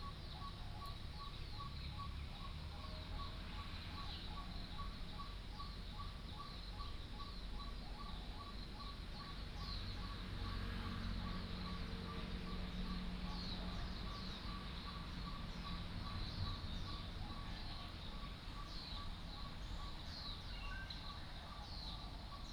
桃源國小, Puli Township - Next to the stream
Dogs barking, Traffic Sound, Birdsong, Next to the stream